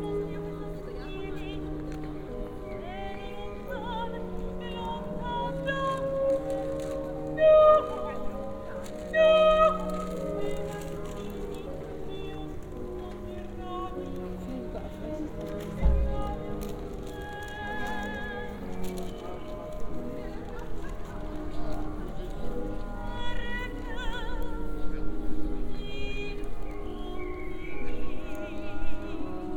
This place is pretty often chosen by a variety of musicians with special attention to those closer to classical rather than popular music.
Recorded with Soundman OKM on Sony PCM D100
województwo małopolskie, Polska, 8 May 2017, ~17:00